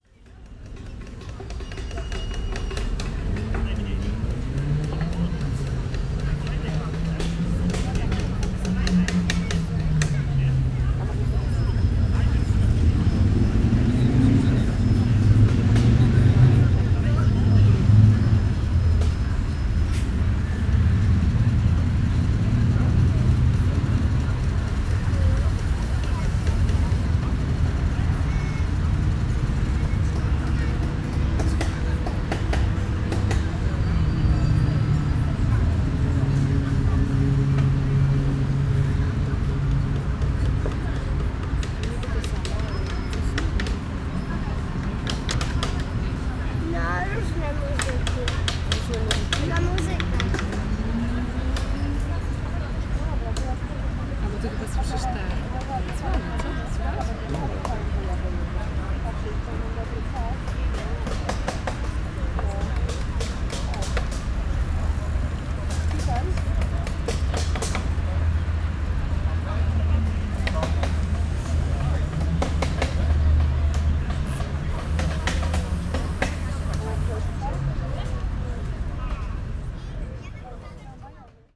Gdańsk, Polska - IKM piknik 3
Nagranie zrealizowane podczas pikniku Instytutu Kultury Miejskiej